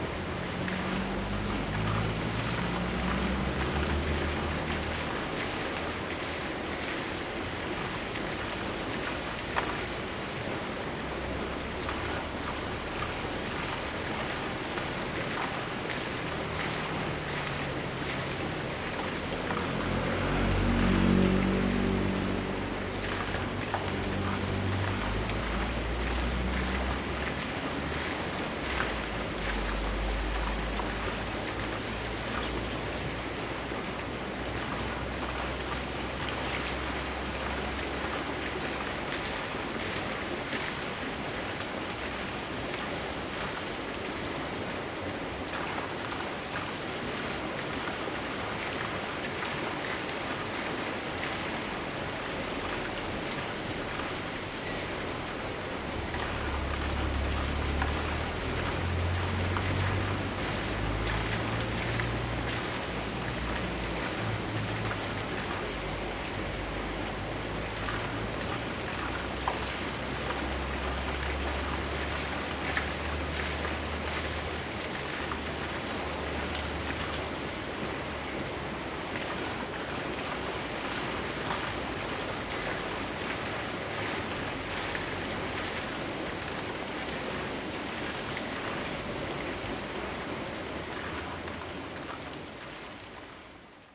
Fuxing Rd., 福興村 - Waterwheel
Waterwheel, Streams of sound, Hot weather
Zoom H2n MS+XY
Hualien County, Taiwan